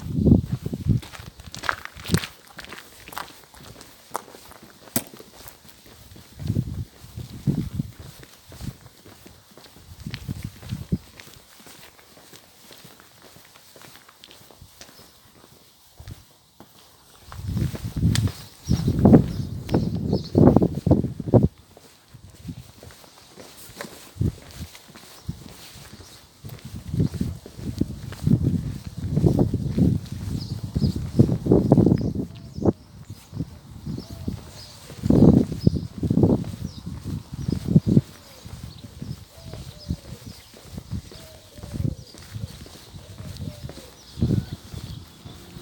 Nová kolonie, Lány, Svitavy, Česko - Outside
Walking through my hometown.